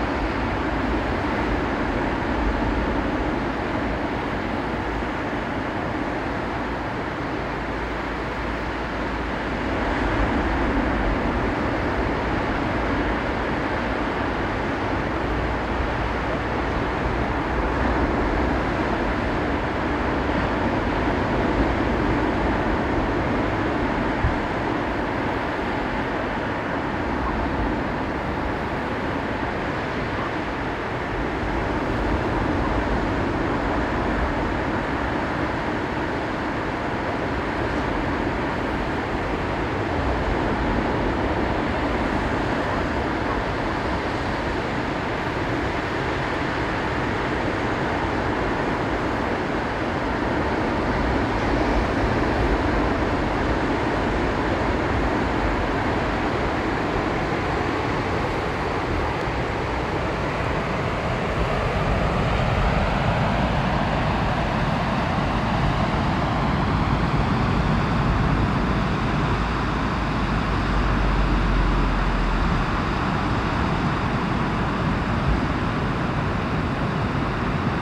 adjusting my recording position in relation to a curved concrete sea barrier